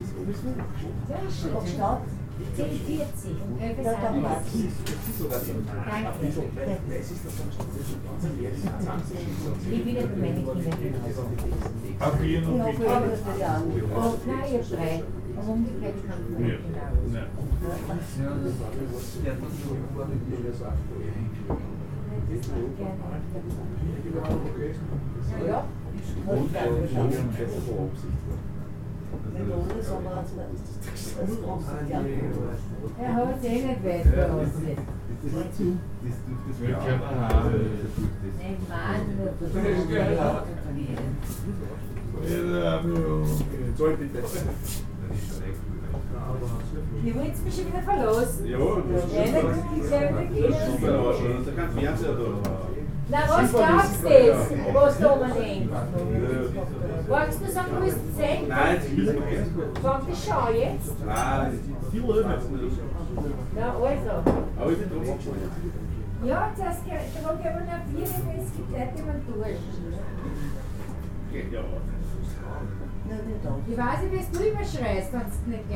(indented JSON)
{
  "title": "wien x - bierbeisl",
  "date": "2015-02-15 21:56:00",
  "description": "bierbeisl, wielandgasse 14, 1100 wien",
  "latitude": "48.18",
  "longitude": "16.38",
  "altitude": "213",
  "timezone": "Europe/Vienna"
}